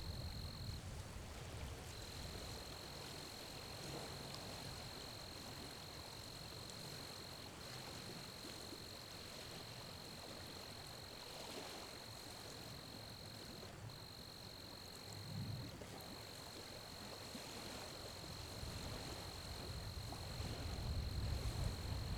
Lake Wister State Park
Recorded from a lakeside campsite. The sound of the waves from the lake coming ashore are heard.
Recorded with a Zoom H5
Oklahoma, United States, 12 April 2022, 21:00